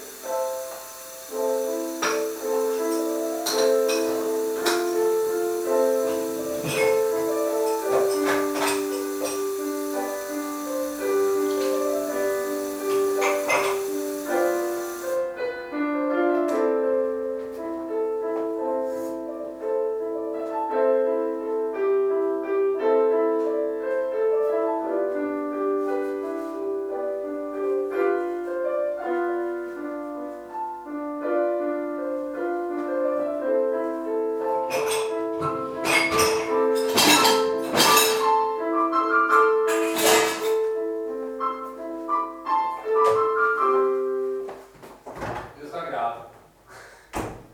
Pavia, Italy - Dishes and piano
Sound of someone exercising piano on the backroung, someone else putting in order the kitchen on the foreground.